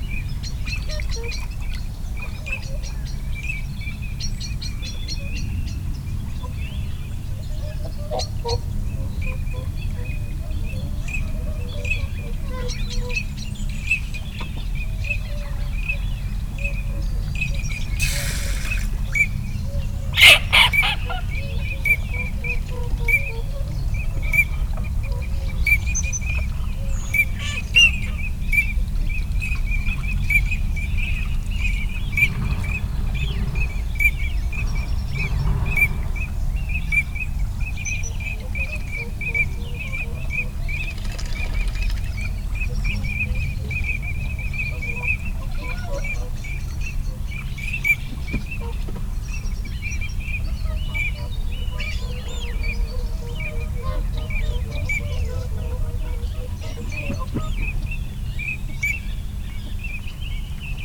Dumfries, UK - teal call soundscape ...
teal call soundscape ... dpa 4060s clipped to bag to zoom f6 ... folly pond ... bird calls from ... whooper swan ... shoveler ... robin ... blackbird ... canada geese ... wigeon ... song thrush ... redwing ... barnacle geese ... rook ... crow ... time edited extended unattended recording ... love the wing noise from incoming birds ... possibly teal ...
Alba / Scotland, United Kingdom, 4 February 2022, 07:15